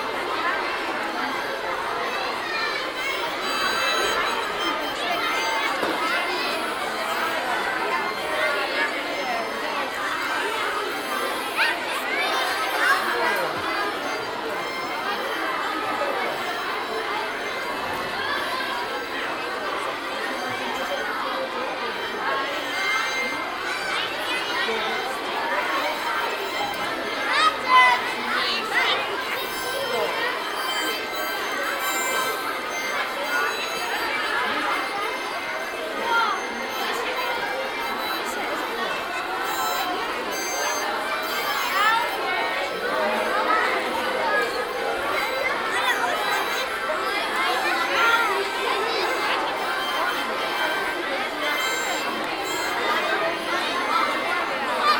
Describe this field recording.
a group of 500 kids leaving the ehibition music 4 kids at frankfurt music fair playing give away blues harps, soundmap d: social ambiences/ listen to the people - in & outdoor nearfield recordings